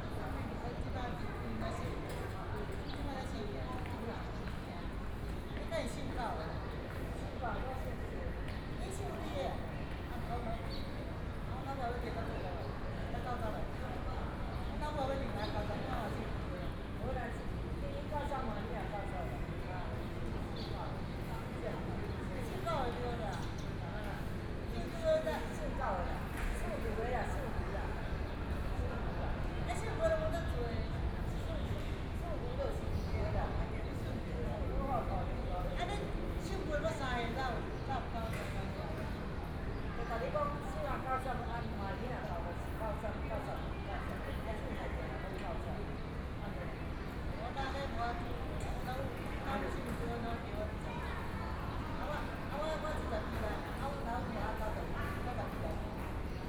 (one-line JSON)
{"title": "Taipei EXPO Park - In the Park", "date": "2013-10-09 13:45:00", "description": "Chat with a group of elderly, Kids game sound, The distant sound of the MRT train, Aircraft flying through, Traffic Noise, Binaural recordings, Sony Pcm d50+ Soundman OKM II", "latitude": "25.07", "longitude": "121.52", "altitude": "7", "timezone": "Asia/Taipei"}